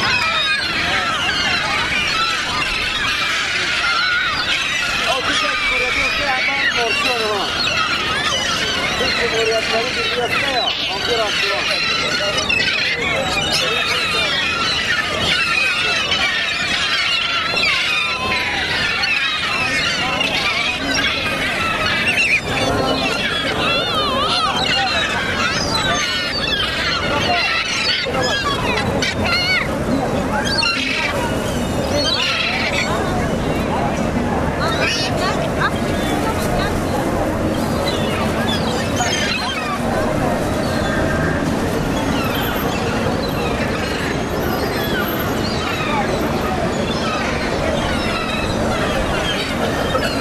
Istanbul, seagulls being fed at sunset
There is probably more people than seagulls in Istanbul. But here we have as many seagulls on one spot that uncountability is probably a good means of measure to define it as a huddle. They are being fed with chicken meat by a man obviously enjoying mastering the flying flock.